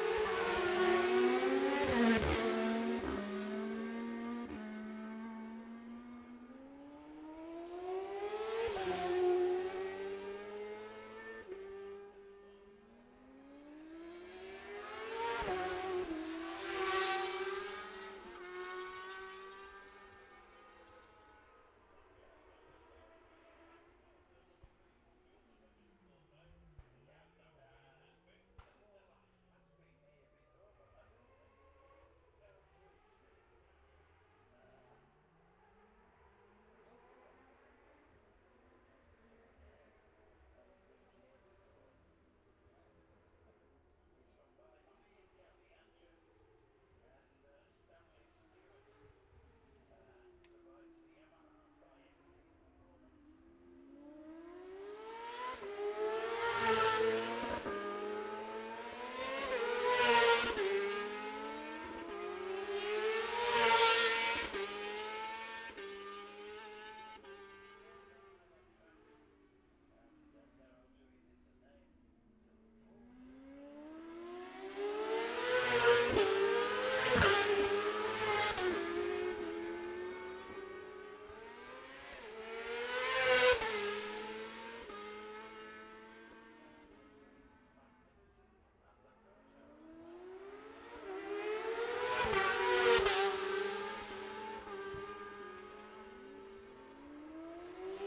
Jacksons Ln, Scarborough, UK - olivers mount road racing ... 2021 ...
bob smith spring cup ... 600cc heat 1 race ... dpa 4060s to MixPre3 ...